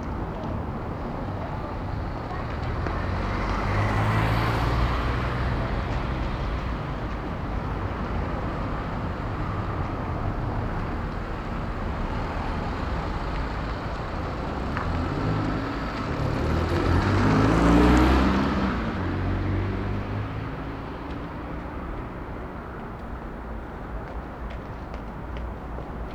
Berlin: Vermessungspunkt Friedelstraße / Maybachufer - Klangvermessung Kreuzkölln ::: 28.01.2011 ::: 17:03
Berlin, Germany, January 2011